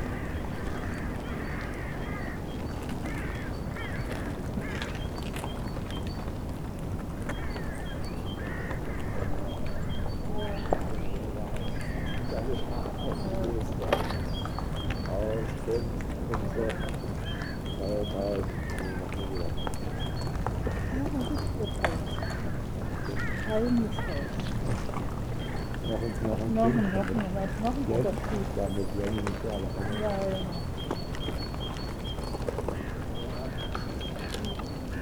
berlin, plänterwald: spreeufer - the city, the country & me: cracking ice-sheets
cracking ice-sheets, voices of promenaders
the city, the country & me: february 12, 2012
12 February 2012, 15:40